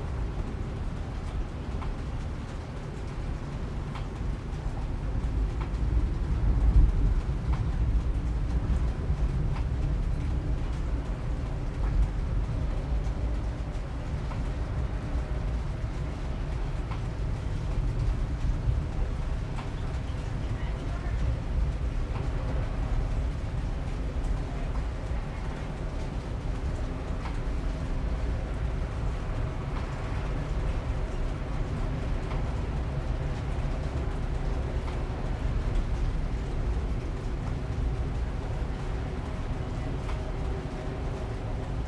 Blackland, Austin, TX, USA - Libra Full Moon Thunderstorm
Recorded with a pair of DPA 4060s and a Marantz PMD661